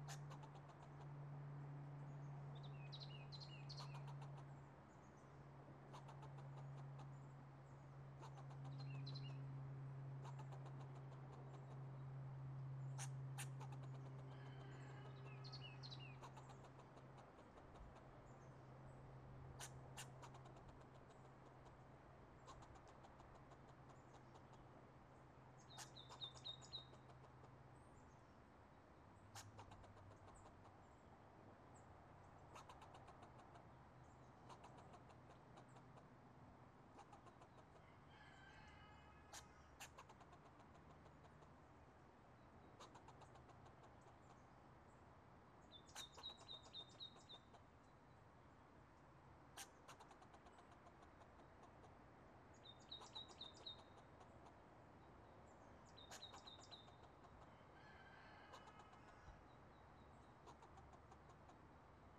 Mountain blvd. Oakland - squirrel
squirrel and his "stress call" and the dogs and Barney - The Rooster /// plus some airplanes always passing above our heads